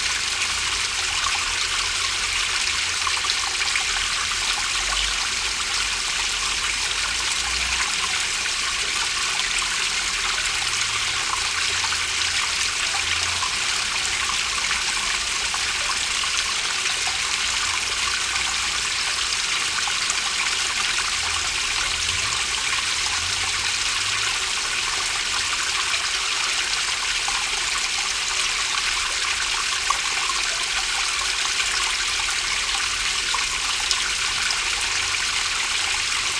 Lavoir Saint Léonard à Honfleur (Calvados)
18 February, 18:10